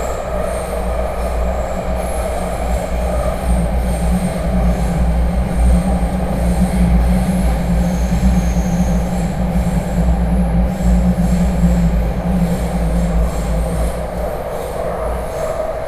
Zhonghe-Xinlu Line, Taipei City, Taiwan - Take in the MRT
3 November, ~08:00